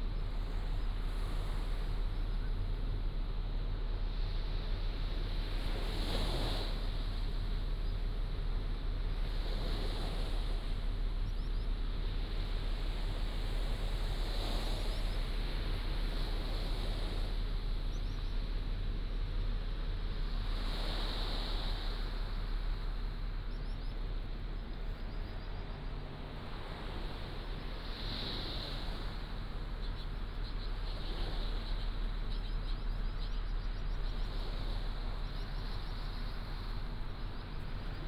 清水溼地, Nangan Township - Standing on the bridge
Standing on the bridge, Sound tide